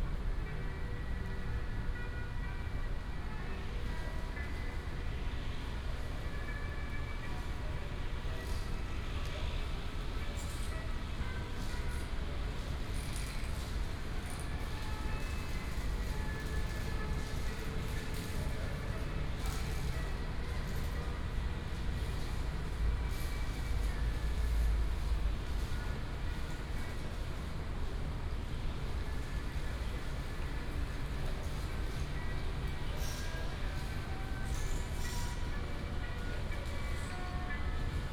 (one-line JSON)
{"title": "新竹市立圖書館, Hsinchu City - small square", "date": "2017-10-30 08:38:00", "description": "A small square outside the library, birds, dog, A group of old people practicing tai chi in the square, Binaural recordings, Sony PCM D100+ Soundman OKM II", "latitude": "24.81", "longitude": "120.97", "altitude": "23", "timezone": "Asia/Taipei"}